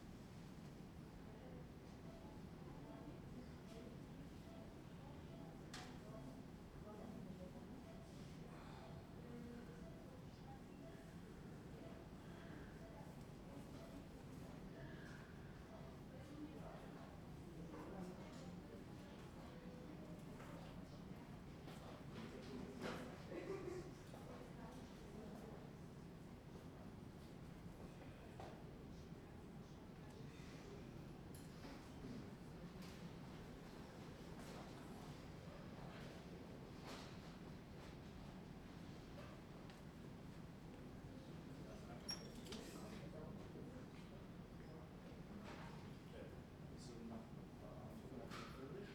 berlin, urban hospital - x-ray department
Urbankrankenhaus / Urban-hospital
waiting for x-ray in the hallway
Berlin, Germany, 10 January 2010, 23:45